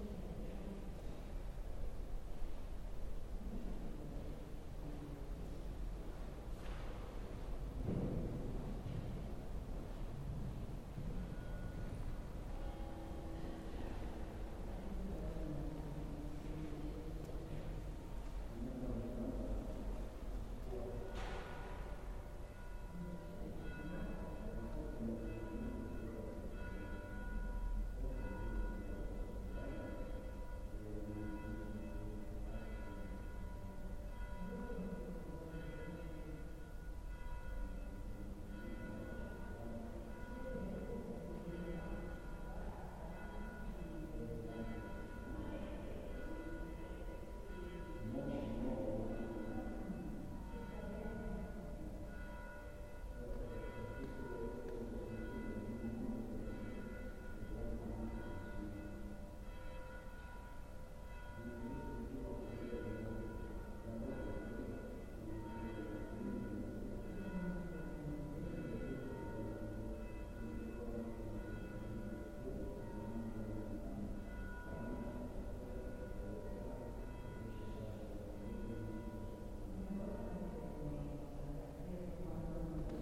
Via S. Giovanni, Saluzzo CN, Italia - Bells from the inside of S. Giovannis Church
Recorded with a Tascam DR-700 in a Church S. Giovanni, APM PLAY IN workshop 2016. First Day
Saluzzo CN, Italy, October 2016